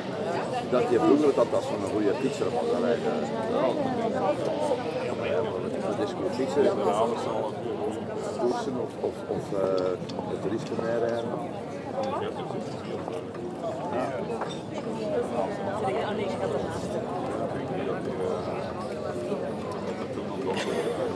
Quiet discussions on the bar terraces, sun is shinning, very much wind, the bell is ringing twelve.